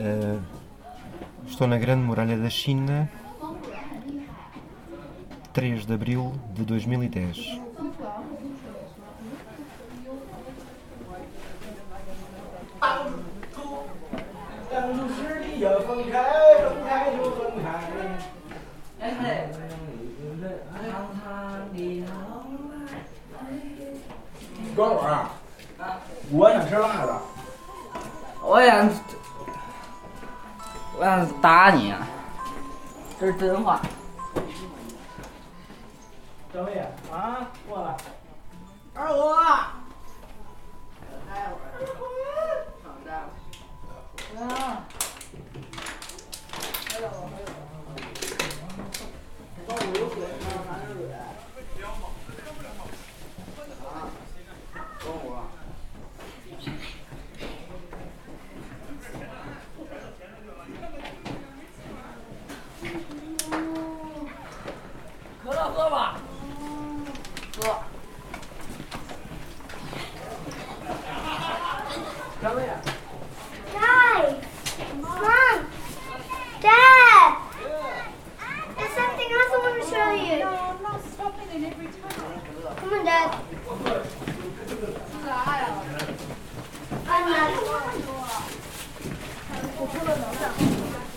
Mutianyu, Great Wall, China
walking, great wall of China, people
10 April 2010, 12:45